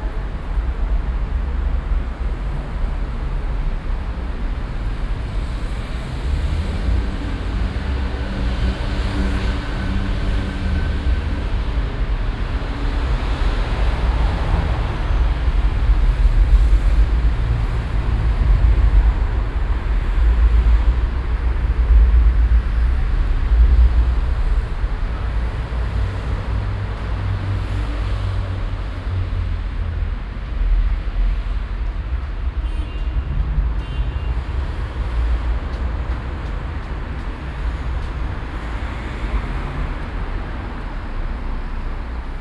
cologne, hansaring, unter bahnbrücke und haltestelle
unter bahnbrücke - verkehr, bahnüberfahrten, menschen
project: social ambiences/ listen to the people - in & outdoor nearfield recording